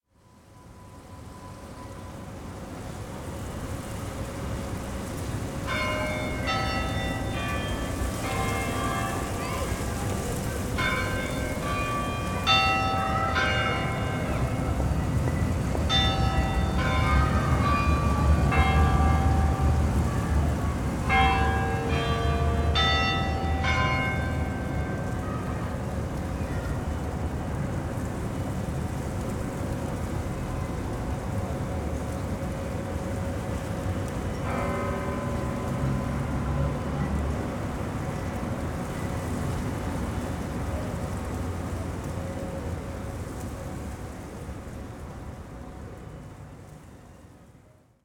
Rotterdam City Hall, Westminster chimes and strikes 4pm (depsite being 2pm)
9 January 2008